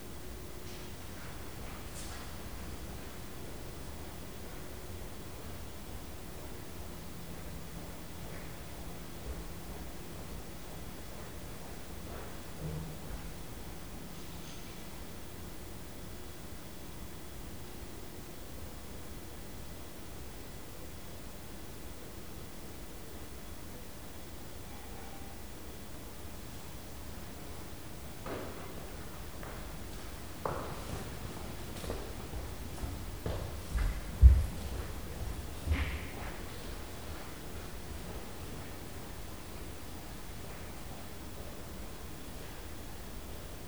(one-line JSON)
{"title": "St Mary's Parade, Lancaster, UK - Lancaster Priory", "date": "2017-08-13 08:48:00", "description": "Meditation at Lancaster Priory. Recorded on a Tascam DR-40 with the on-board coincident pair of microphones. The gain is cranked right up, the Priory being very quiet with just movements of a member of the clergy preparing for the next service, the 9 o'clock bells and a visiting family towards the end of the recording.", "latitude": "54.05", "longitude": "-2.81", "altitude": "33", "timezone": "Europe/London"}